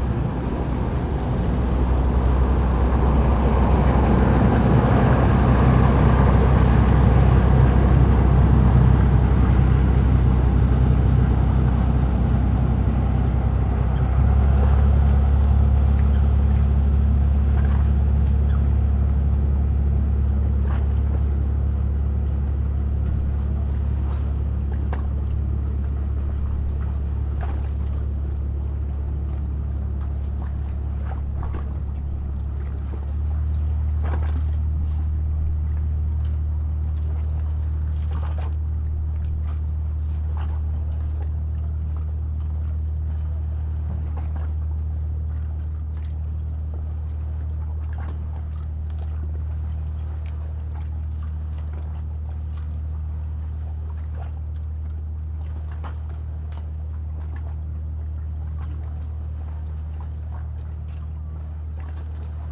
Müggelsee, Köpenick, Berlin, Deutschland - tour boat departing
a tour boat departs, decending drone
(Sony PCM D50)
Berlin, Germany, September 2016